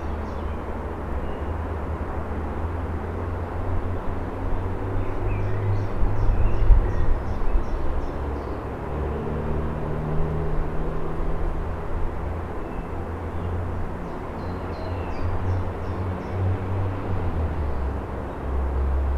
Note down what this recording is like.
City noises captured from the viewpoint above so-called stairs to nowhere.